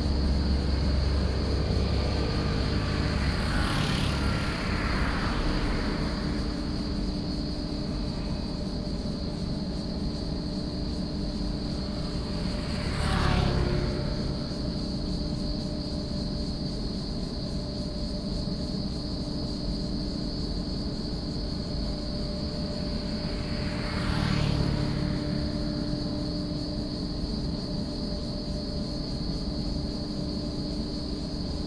桃20鄉道, Longtan Dist., Taoyuan City - factory noise and Cicadas
Technology factory noise, Cicadas, Traffic sound
Longtan District, 桃20鄉道5-2